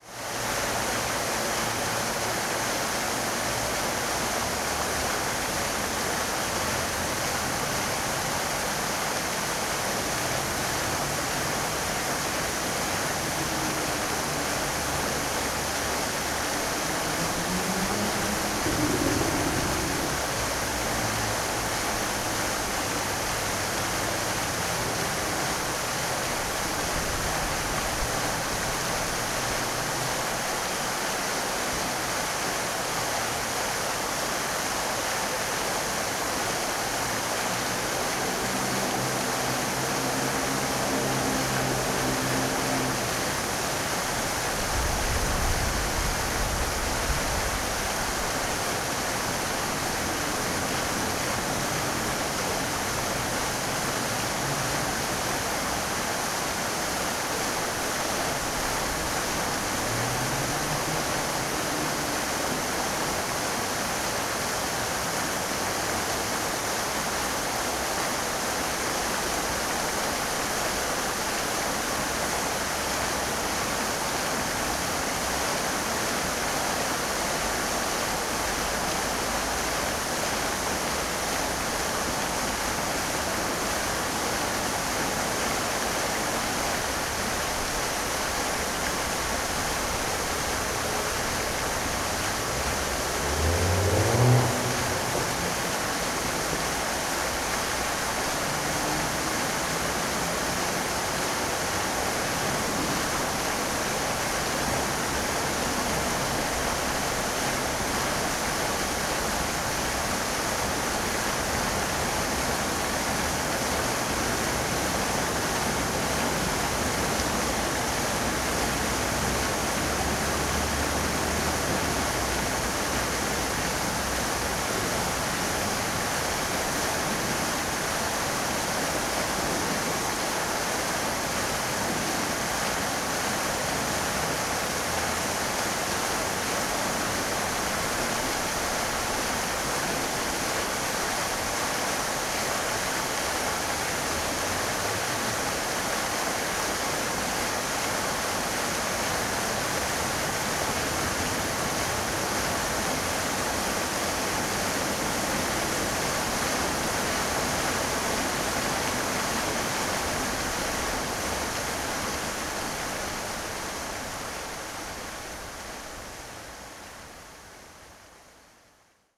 {
  "title": "wiesbaden, kurhausplatz: fountain - the city, the country & me: fountain",
  "date": "2016-05-06 16:54:00",
  "description": "the city, the country & me: may 6, 2016",
  "latitude": "50.08",
  "longitude": "8.24",
  "altitude": "123",
  "timezone": "Europe/Berlin"
}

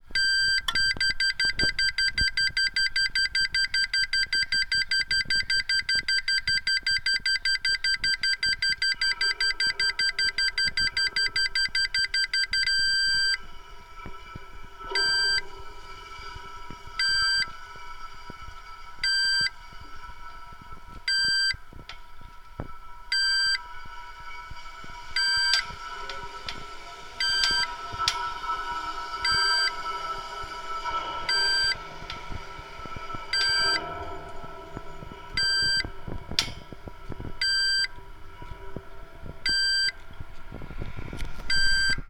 {
  "title": "Tallinn, Baltijaam crosswalk - Tallinn, Baltijaam crosswalk (recorded w/ kessu karu)",
  "date": "2011-04-20 14:06:00",
  "description": "hidden sounds, sounds from inside a crosswalk signal at Tallinns main train station",
  "latitude": "59.44",
  "longitude": "24.74",
  "altitude": "20",
  "timezone": "Europe/Tallinn"
}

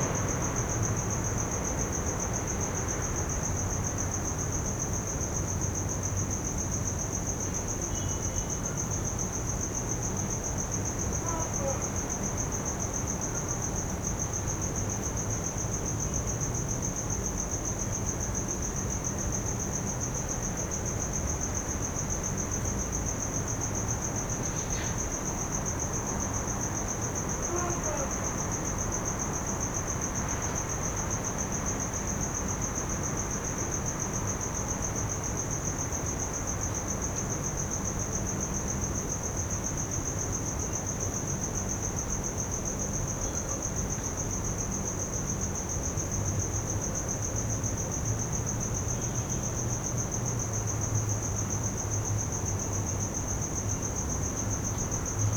{
  "title": "Windhoek, Hotel Pension Steiner, Garden - Hotel Pension Steiner, Garden",
  "date": "2019-04-30 22:03:00",
  "description": "in a room, maybe dreaming bad, calling for papa",
  "latitude": "-22.57",
  "longitude": "17.08",
  "altitude": "1668",
  "timezone": "Africa/Windhoek"
}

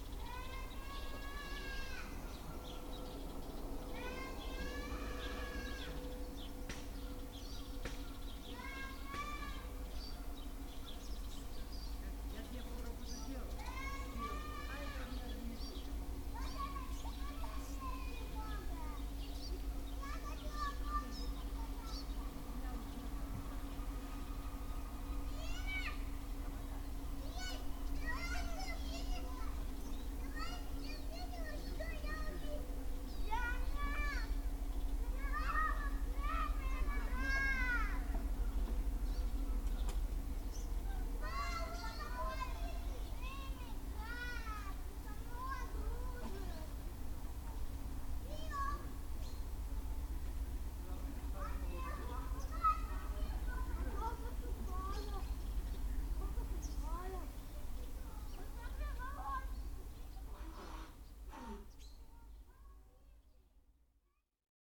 {
  "title": "Medvezhyegorsk, Republic of Karelia, Russia - Anton's House",
  "date": "2016-08-02 07:45:00",
  "description": "Binaural recordings. I suggest to listen with headphones and to turn up the volume.\nThis is the soundscape from Anton's House, a guy who hosted me for free in Medvezhyegorsk.\nRecordings made with a Tascam DR-05 / by Lorenzo Minneci",
  "latitude": "62.91",
  "longitude": "34.44",
  "altitude": "36",
  "timezone": "Europe/Moscow"
}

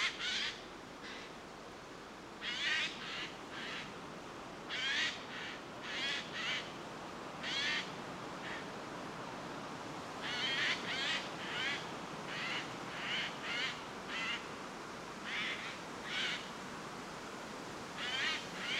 {
  "title": "Gaižiūnai, Lithuania, Eurasian Jay, wind",
  "date": "2021-10-04 16:40:00",
  "description": "Windy day. Eurasian Jay in Vyzuonos biological reserve",
  "latitude": "55.59",
  "longitude": "25.53",
  "altitude": "108",
  "timezone": "Europe/Vilnius"
}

